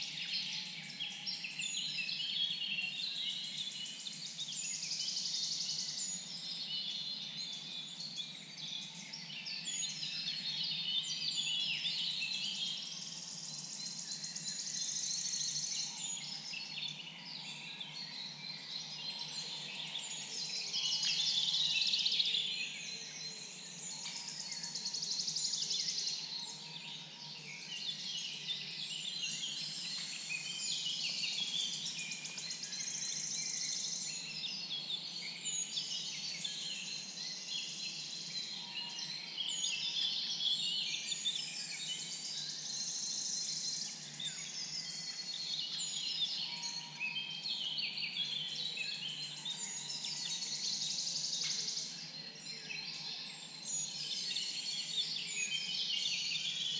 Zielonka Forest Landscape Park, Poland - Birds in the morning
early morning trip to Zielonka Forest in Greater Poland Voivodship, Poland; these days finding a place unpolluted with man-made sounds becomes a real challenge so the only suitable time of the day is dawn; Birds seem to like it as well ;)
Recorded with PCM-D100 and Clippy EM270 Stereo Microphones